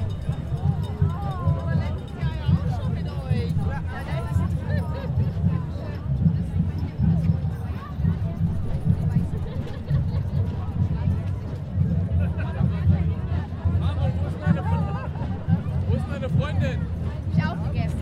Breslauer Platz, Köln, Deutschland - Geisterzug / Ghosts Parade
Am Karnevals-Samstag findet in Köln jedes Jahr der Geisterzug (Kölsch: Jeisterzoch) statt. Vor dem Zug fährt ein Polizeifahrzeug, dann folgen viele phantasievoll verkleidete Menschen und Gruppen. Es ziehen im Zug auch Gruppen mit Musikinstrumenten mit.
Die Aufnahmestandort wurde nicht verändert. Im Gedränge ist es leider ein paarmal vorgekommen, dass Passanten das Mikrophon berührten.
On Carnival Saturday is in Cologne every year the Ghost Parade (For Cologne native speakers: "Jeisterzoch"). Before the parade drives a slowly police car, then follow many imaginatively dressed people and groups. In the parade also aere many groups with musical instruments.
The receiving location has not changed. In the crowd it unfortunately happened a several times that some people touched the microphone.